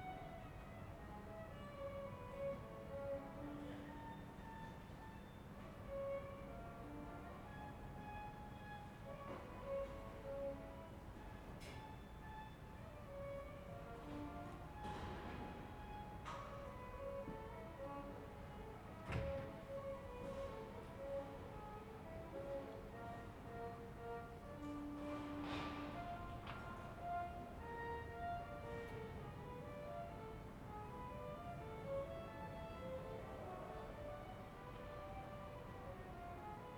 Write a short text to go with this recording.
"Round five p.m. terrace with violin, bells and barking Lucy in the time of COVID19": soundscape. Chapter CLXXIV of Ascolto il tuo cuore, città. I listen to your heart, city, Wednesday, May 20th, 2021. Fixed position on an internal terrace at San Salvario district Turin. A violin is exercising in the south, shortly after 5 p.m. the bells ring out and Lucy barks and howls, as is her bad habit. More than one year and two months after emergency disposition due to the epidemic of COVID19. Start at 4:53: p.m. end at 5:24 p.m. duration of recording 30’43”